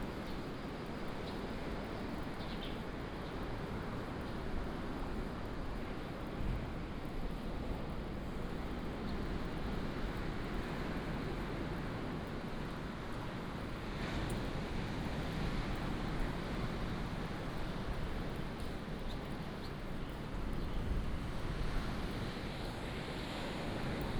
上多良部落, Taimali Township - Facing the sea
Road outside the station, Facing the sea, Bird cry, Traffic sound, early morning, Sound of the waves
Binaural recordings, Sony PCM D100+ Soundman OKM II
Taitung County, Taiwan, 14 April